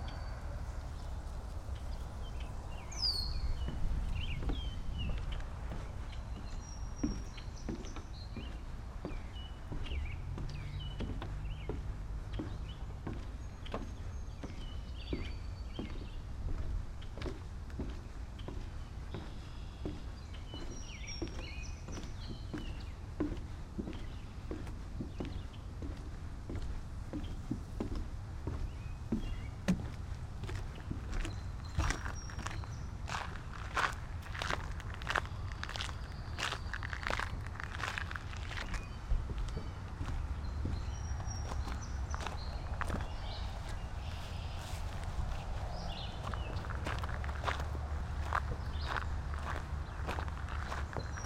Cass Benton Park, Northville, MI, USA - Cass Benton Park
Starlings, wetland board walk, maple beech tree grove.